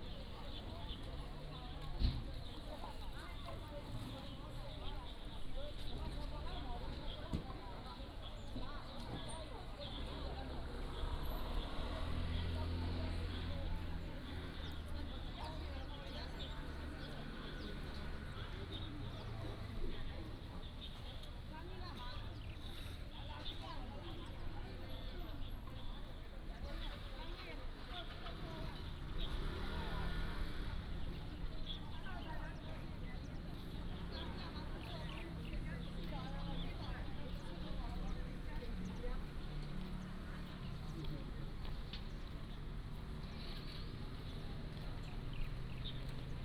介壽澳口公園, Nangan Township - In the Park
Sitting Square Park, Traffic Sound
福建省, Mainland - Taiwan Border, 15 October 2014